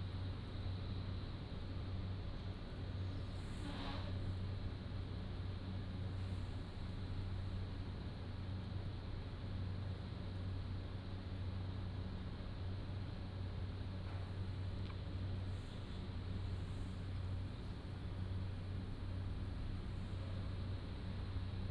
Minsheng Rd., Yuli Township - The town in the morning
The town in the morning, Morning streets, Traffic Sound, In front of the convenience store
Hualien County, Taiwan, 9 October 2014